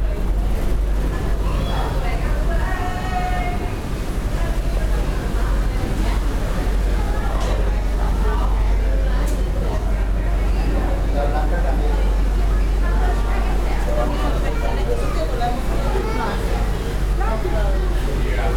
Upper Bay - Safety Announcement, Staten Island Ferry
Staten Island Ferry safety announcement.